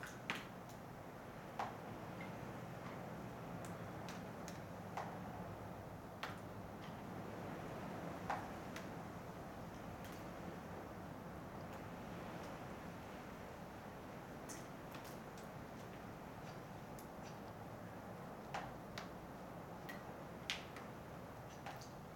gorod Vorkuta, République des Komis, Russie - Melting to the ground
In an old abandonned building in the first settlement of the city the water is pouring out of the cellar and the ice is everywhere.